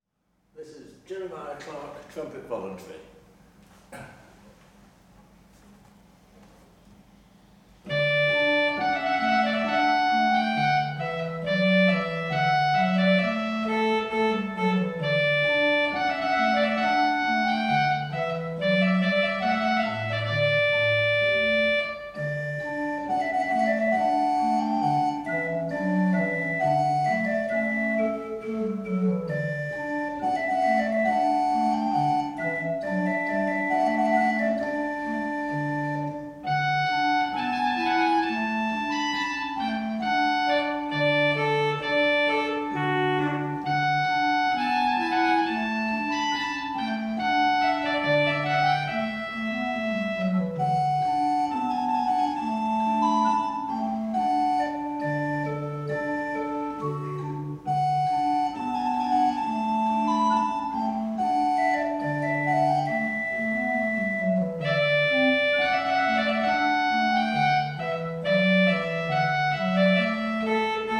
St Lawrence's newly renovated church organ. David Jones plays Jeremiah Clark's Trumpet Voluntary. The organ was built by Wilkinson’s of Kendal in the 19th century and is one of a very few remaining of its type. Pearl MS-8, SD MixPre 10t
North West England, England, United Kingdom